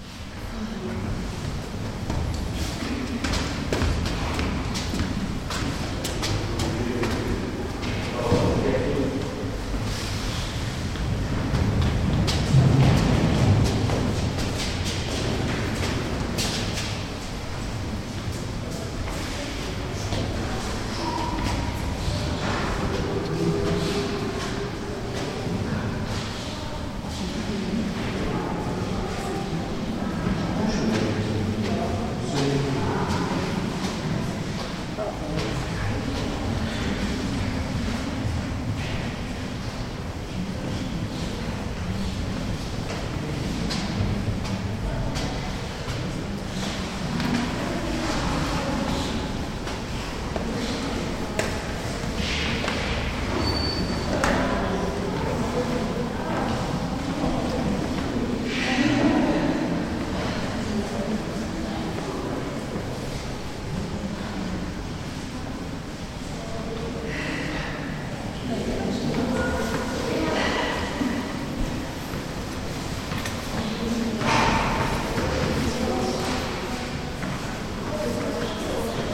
unterhalb der freitragenden wendeltreppe mit 164 gusseisernen stufen
beneath the spiral stair
the city, the country & me: october, 2008